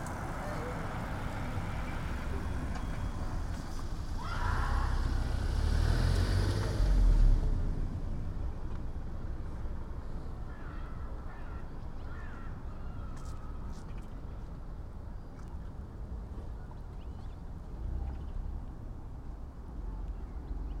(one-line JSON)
{"title": "Autumn Drive, Teams, Gateshead, UK - Autumn Drive", "date": "2016-08-14 17:30:00", "description": "Heard then spotted pair of finches in a tree, possibly Gold Finches. Quickly grabbed recorder out of pocket and put wind sock on. You can just about make them out amongst sound of children playing and shouting. Sounds also include people laughing, cyclist riding past, car driving and other birds over the river. Recorded on Sony PCM-M10.", "latitude": "54.96", "longitude": "-1.63", "altitude": "4", "timezone": "Europe/London"}